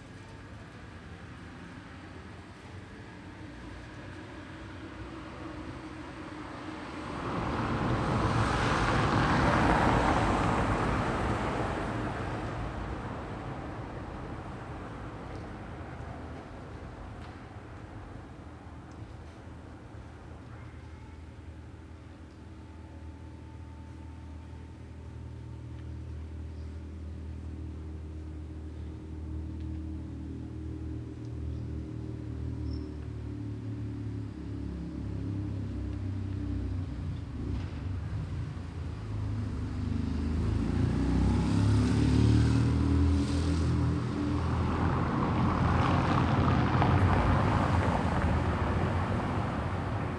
Bissingen an der Teck - Street setting, church bell.
Bissingen was visited by R. M. Schafer and his team in 1975, in the course of 'Five Village Soundscapes', a research tour through Europe. So I was very curious to find out what it sounds like, now.
[Hi-MD-recorder Sony MZ-NH900, Beyerdynamic MCE 82]
Bissingen, Germany